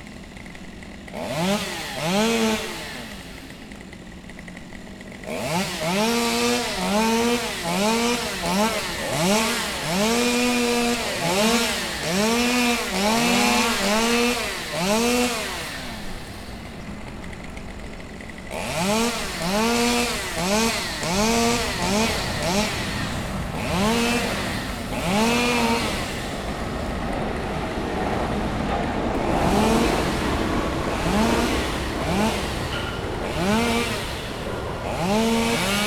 Lisbon, Alvalade, cutting trees
Primary_School, cutting_trees, chainsaw, plane
15 July 2010, 09:13